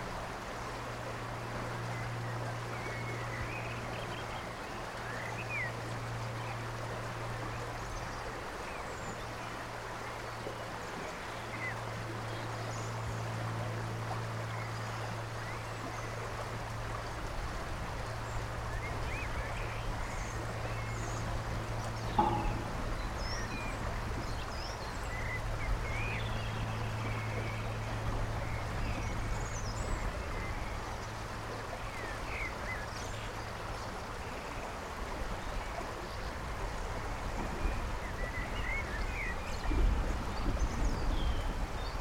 Gateshead District, UK - BlackhallMill Bridge DerwentReduxFrankKojayProject 010517 1615
Frank Kojay's "The Derwent Vale" is a hand written book and collage work bequested to Gateshead Library Archive with explicit instructions that it never be reprinted - to see the book you have to visit the archive.
This project takes inspiration from the places described and illustrated in the book and seeks to map these out using the following methods: revisiting and making audio field recordings, photographs and images using eye tracking technology at these locations along the River Derwent.
(Project by Ben Freeth and Gateshead Arts Development Team).
Sennheiser 416 shotgun mic and rycote wind shield + H4n recorder